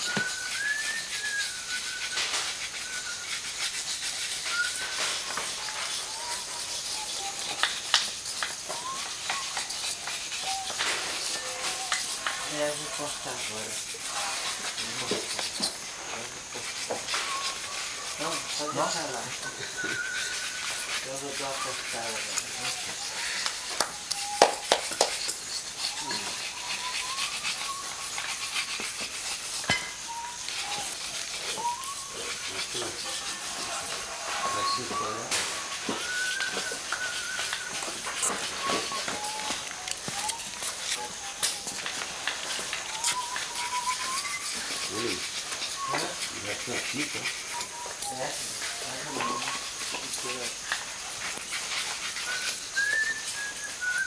Mapia- church of Santo Daime- preperations to make Ayahuasca drink. This is the sounds of the scraping of Banisteriopsis caapi, the DMT holding ingredient. The songs are called hinario's and are received from the plant spirits.